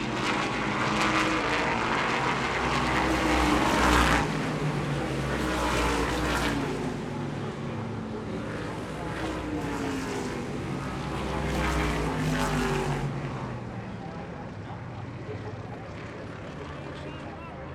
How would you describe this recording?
Heat Races for the upcoming 81 lap open modified race